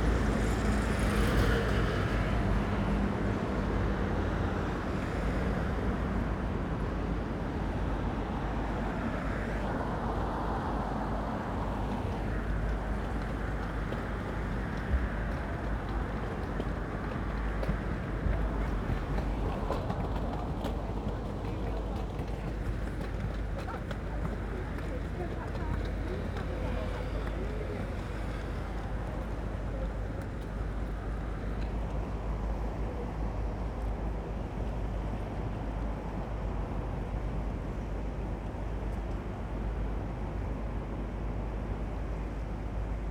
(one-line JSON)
{"title": "Blackfriars Bridge traffic and the faint river, Sea Containers House, Upper Ground, London, UK - Blackfriars Bridge traffic and the faint river", "date": "2022-05-16 12:55:00", "description": "A passing river taxi creates river waves barely audible above the traffic.", "latitude": "51.51", "longitude": "-0.10", "altitude": "3", "timezone": "Europe/London"}